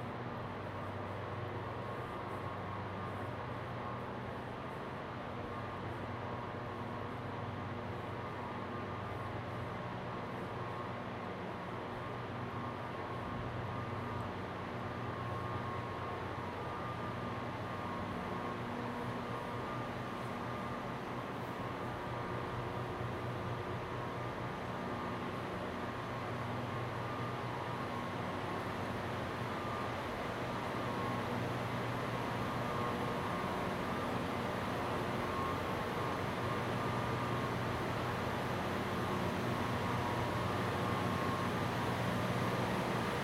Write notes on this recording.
This is the sound of electricity being made at DeCew Generating Station 1. The sound was recorded on an H2n mounted on a monopod as I walked from one end of the power house to the other, then opened a door to outside. The file is unaltered and in real time as I walk by the beautiful old machinery on a thick concrete floor built from on site river rock. DeCew 1 is the oldest continually running hydroelectric generating station in Canada, built in 1898 and one of the first uses in the world of Nikola Tesla’s polyphase current. The water source is a man-made reservoir fed by the Welland Canal at the top of the Niagara Escarpment, Lake Gibson, and the discharge is The Twelve Mile Creek that opens to Lake Ontario. This recording was made thanks to the Ontario Power Generation employee who preferred to be anonymous and was used in the audio program for the installation, Streaming Twelve, exhibited at Rodman Hall Art Centre.